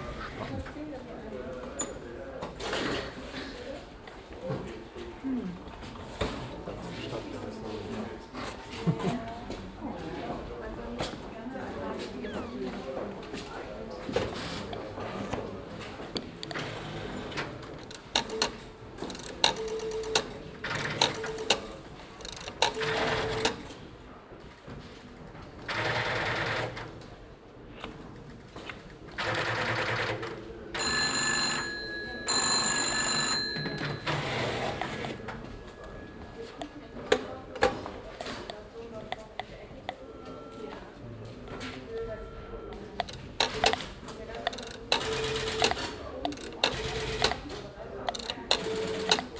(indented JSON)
{
  "title": "Südstadt, Kassel, Deutschland - Kassel, Orangerie, technic museum",
  "date": "2012-09-13 16:30:00",
  "description": "Inside the classical Orangerie building at the technic museum. The sounds of old telephones ringing and dialing.\nsoundmap d - social ambiences, art places and topographic field recordings",
  "latitude": "51.31",
  "longitude": "9.50",
  "altitude": "139",
  "timezone": "Europe/Berlin"
}